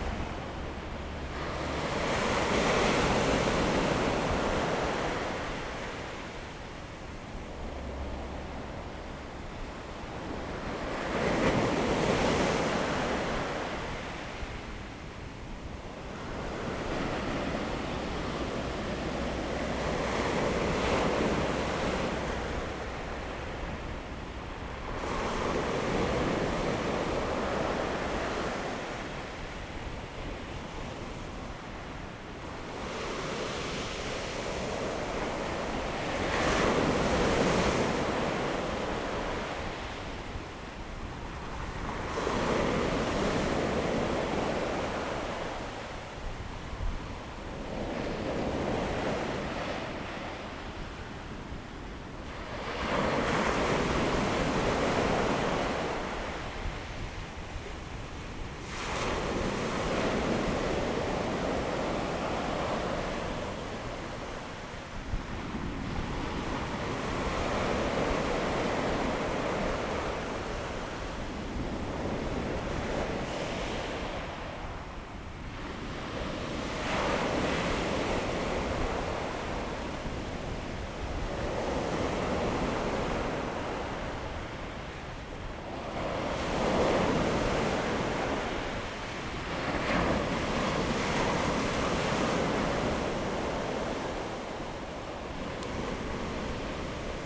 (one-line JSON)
{"title": "大鳥村, Dawu Township - sound of the waves", "date": "2014-09-05 16:51:00", "description": "Sound of the waves, In the beach, The weather is very hot\nZoom H2n MS +XY", "latitude": "22.41", "longitude": "120.92", "timezone": "Asia/Taipei"}